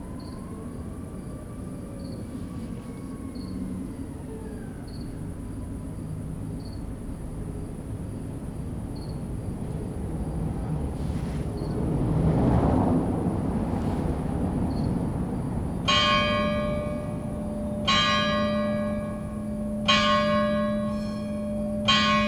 Olomouc, Czech Republic - Night at the Dome with cars and crickets
Near the Dome and the street traffic, trams and car on the cobblestones
September 2012